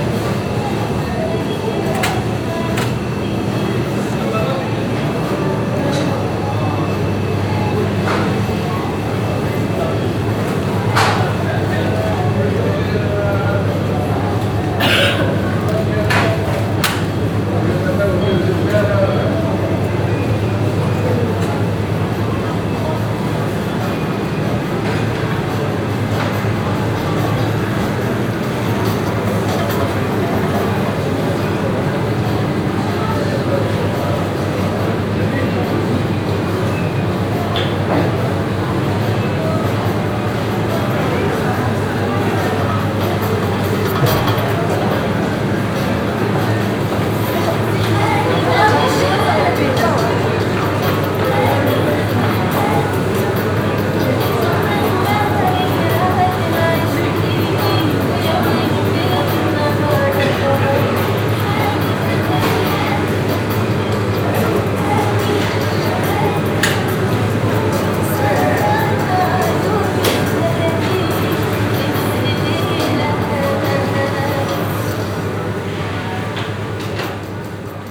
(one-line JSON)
{"title": "Ville Nouvelle, Tunis, Tunesien - tunis, rue de greece, supermarket", "date": "2012-05-02 14:00:00", "description": "Inside a supermarket. The sound of arabian music thru a broken speaker, plastic bags, women talking, a german customer, the ventilation and a walk thru the shelves.\ninternational city scapes - social ambiences and topographic field recordings", "latitude": "36.80", "longitude": "10.18", "altitude": "11", "timezone": "Africa/Tunis"}